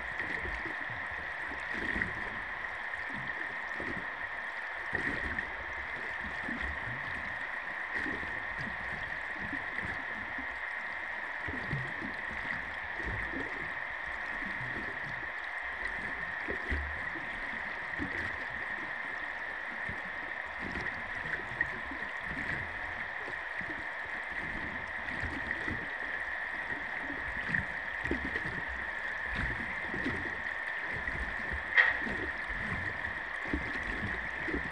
Klaipėda, Lithuania, underwater machinery
hydrophone recording. a lot of engine's sounds underwater
5 May 2016